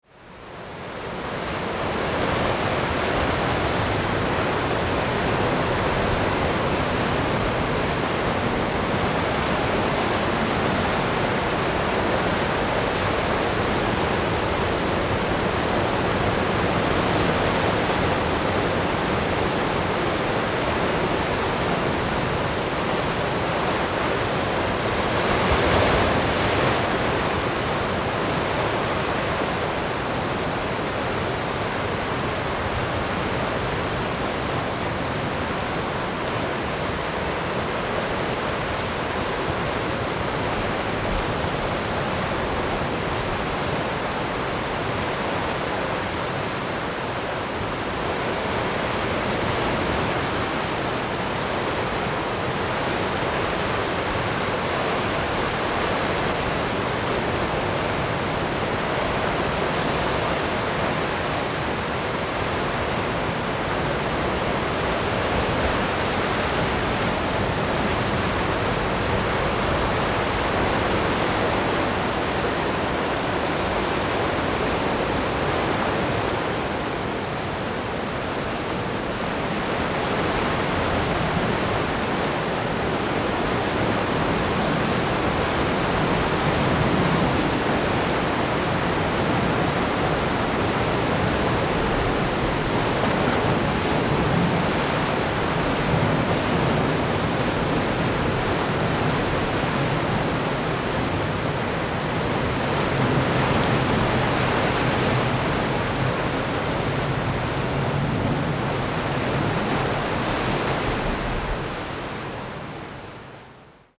石門婚紗廣場, 富基里 Shimen District - Big waves
Big waves, sound of the waves
Zoom H4n+Rode NT4(soundmap 20120711-10 )
Shimen District, New Taipei City, Taiwan, 11 July 2012